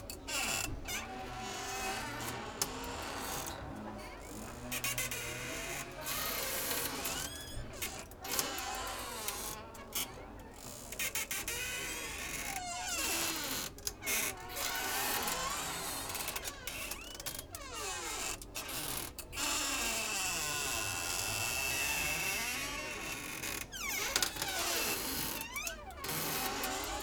sea room, Novigrad, Croatia - moody tales
built in closet, open windows
16 July 2013